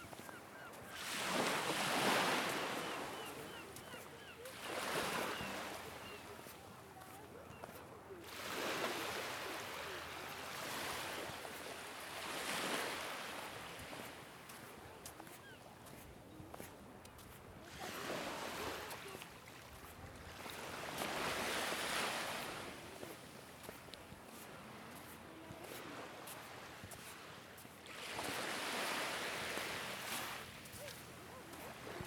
Tregastel, Bretagne, France - walking on the beach [grève blanche]
Trégastel, Grève blanche, un soir.Marche sur la plage auprès des
vagues.quelques voix et mouettes au loin.Pieds dans le sable.
Trégastel Grève blanche Beach.Walking on the beach, waves
footsteps on sand.Somes voices and seagulls.